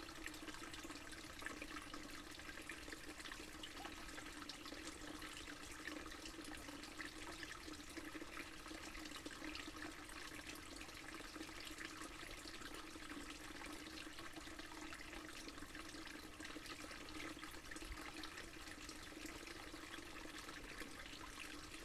Latvia, Naujene, straeamlet to Daugava
October 2012